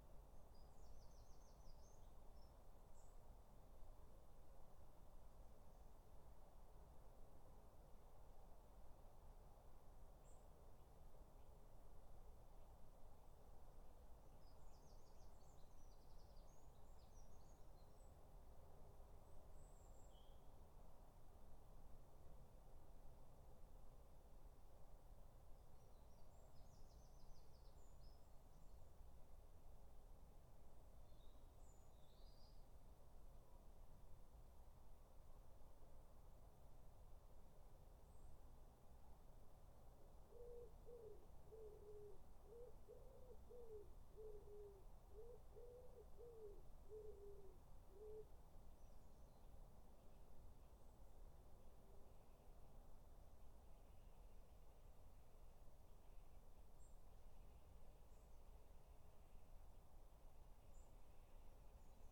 Dorridge, West Midlands, UK - Garden 3
3 minute recording of my back garden recorded on a Yamaha Pocketrak
Solihull, UK